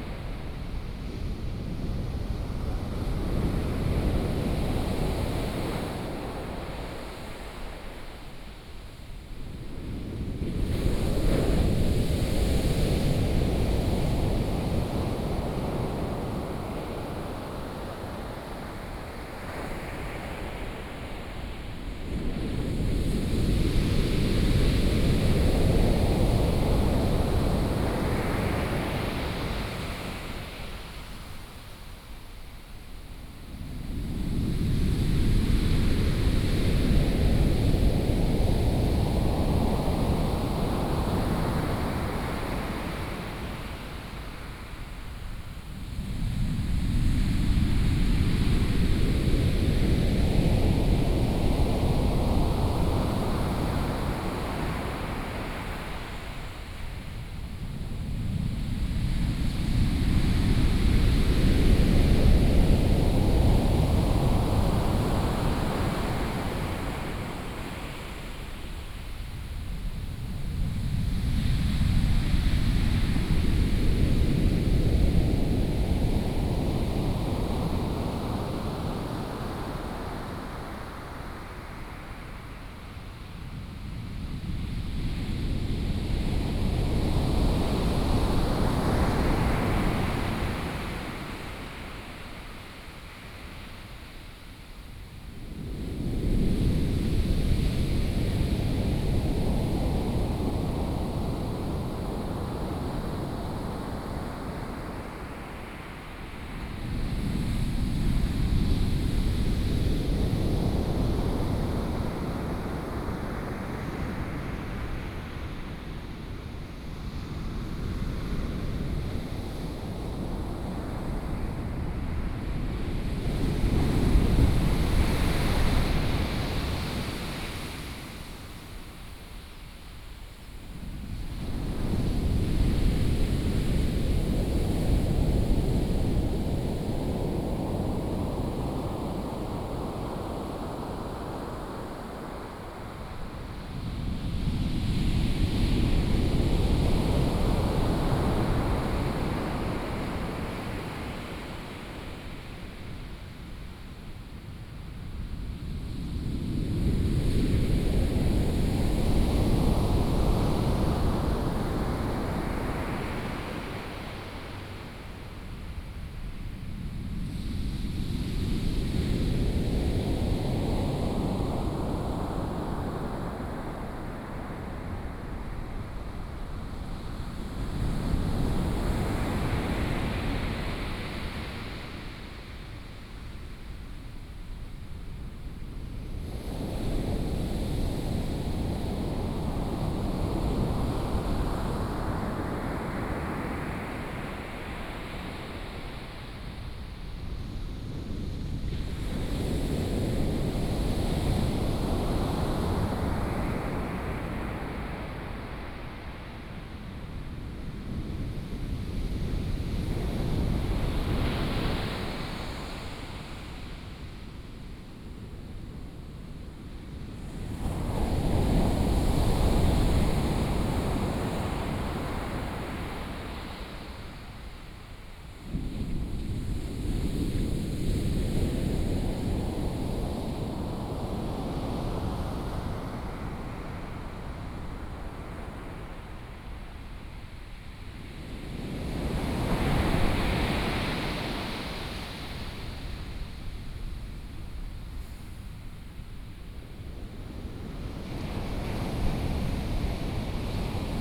太麻里海岸, Taitung County - Sound of the waves
Sound of the waves, On the beach